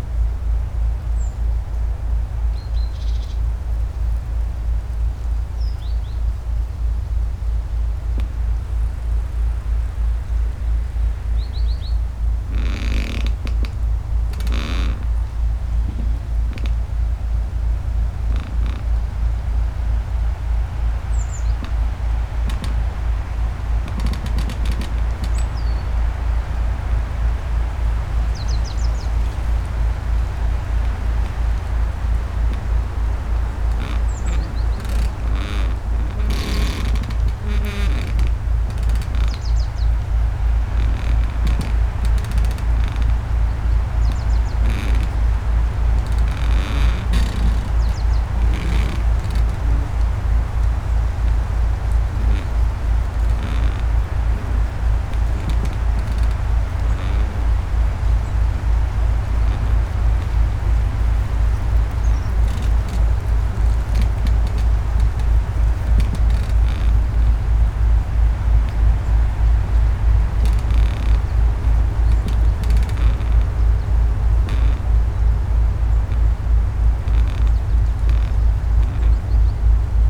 hohensaaten/oder: pine forest - the city, the country & me: squeaking pine tree
squeaking pine tree, wind, birds, pusher boat on the oder river getting closer
the city, the country & me: march 27, 2016
27 March 2016, 15:49